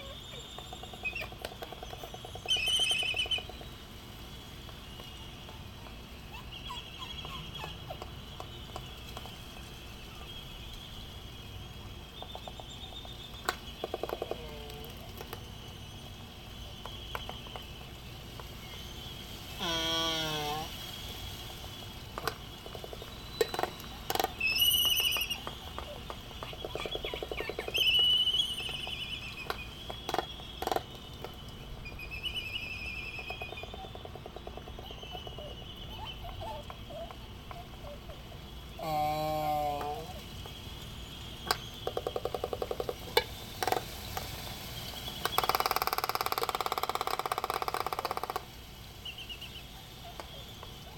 United States Minor Outlying Islands - laysan albatross dancing ...
Sand Island ... Midway Atoll ... laysan albatross dancing ... Sony ECM 959 one point stereo mic to Sony Minidisk ... background noise ...
25 December 1997, 10:30